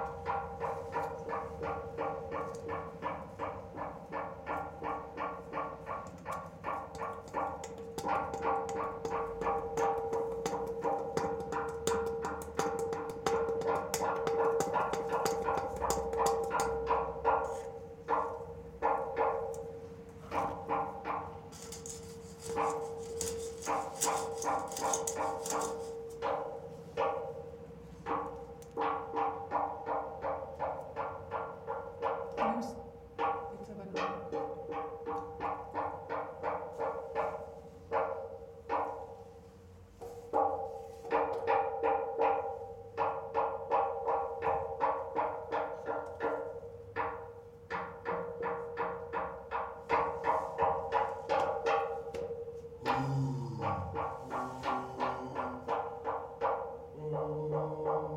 playing the light pole, Muggenhof
playing concrete pole with microphones inside.
Nuremberg, Germany, 13 April 2011